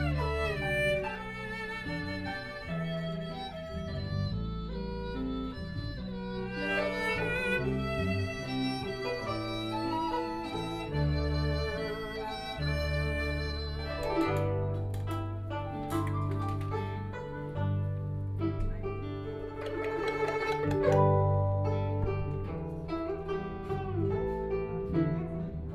A visually impaired person to play with the orchestra is practicing sound of conversation, Binaural recording, Zoom H6+ Soundman OKM II